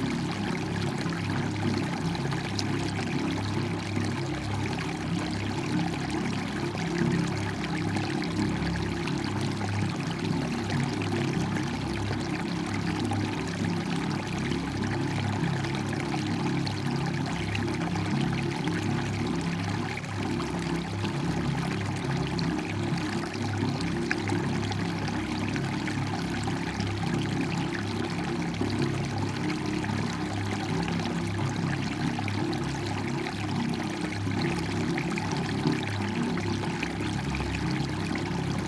Lasocin, Pieszyce, Polska - water flow near street, resonance
a little stream coming down from the mountains, flowing along the street. A specific resonance is audible under dense vegetation, but suddenly stops.
(Sony PCM D50)
dolnośląskie, RP